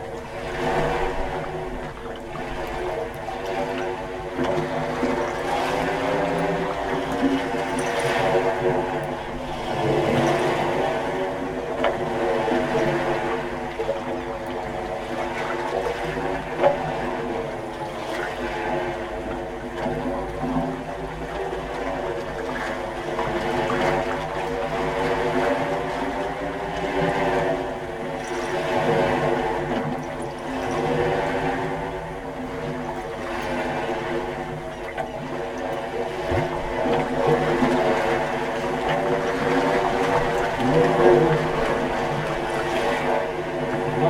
Glass Tubes on the Peipsi shore: Estonia
Estonia